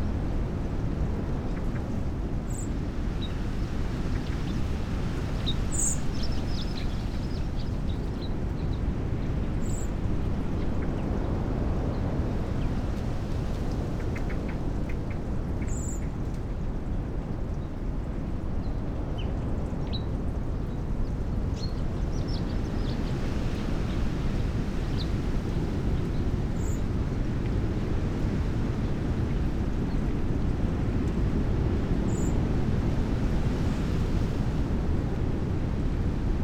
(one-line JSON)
{"title": "Visitor Centre, Cliff Ln, Bempton, Bridlington, UK - bird feeders at bempton ...", "date": "2021-12-05 09:30:00", "description": "bird feeders soundscape at rspb bempton ... xlr sass to zoom h5 ... unattended ... time edited recording ... bird calls ... tree sparrow ... blackbird ... great tit ... blue tit ... goldfinch ... herring gull ... windy ...", "latitude": "54.15", "longitude": "-0.17", "altitude": "94", "timezone": "Europe/London"}